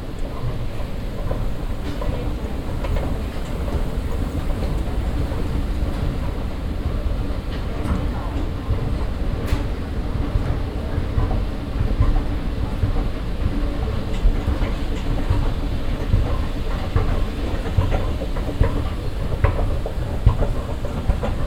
essen, flachsmarkt, book store
In einer großen Buchhandlung. Fahrt durch die Abteilungen mit der Rolltreppe.
Inside a big book store in the morning. Driving through the departments on moving staircases.
Projekt - Stadtklang//: Hörorte - topographic field recordings and social ambiences